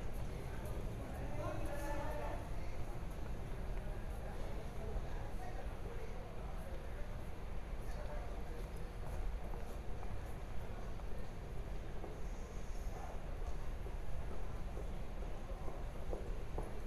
Vertrekpassage, Schiphol, Nederland - Inside The Schiphol departure lounge during Corona lockdown
Recording has been made inside the Schiphol departure lounge number 2. Minimal traffic due to the Corona Lockdown.
Recorder used is a Tascam DR100-MKlll. Recorder was left for about 10 minutes on a servicedesk.